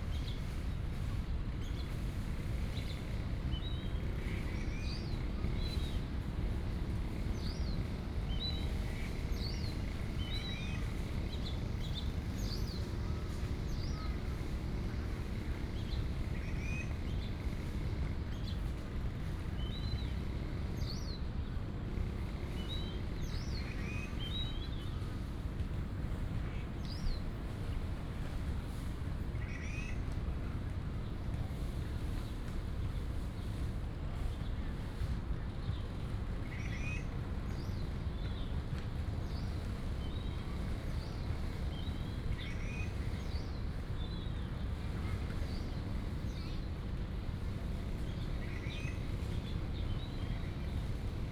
醉月湖, National Taiwan University - Bird sounds and Goose calls
At the university, Bird sounds, Goose calls, pigeon
Da’an District, Taipei City, Taiwan